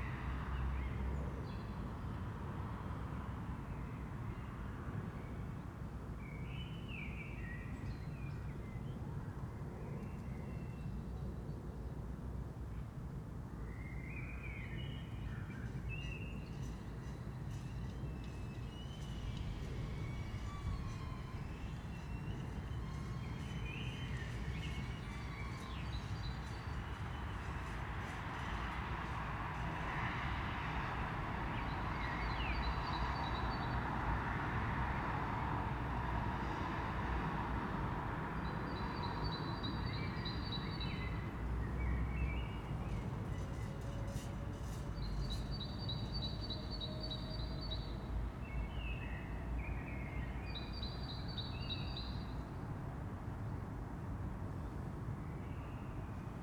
Kleine Campestraße, Braunschweig, Deutschland - Balcony 4thfloor
sound of the city at a balcony on the 4th floor, recorded with a pair of UsiPro and SD702